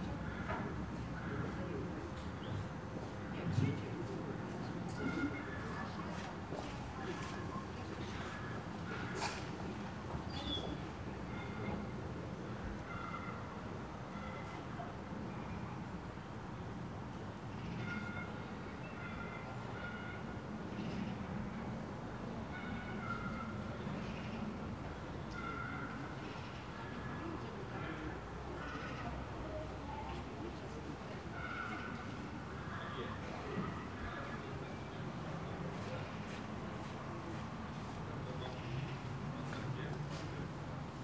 two cleaners working in an office - vacuuming, moving things around, knocking over pieces of furniture. nice reverb of conversations and steps in the alcove of a tin building. dog barks echoing of the nearby apartment buildings, a few older man talking on a bench a few meters away, people walking, taking care of their Saturday morning errands.
1 March, 13:40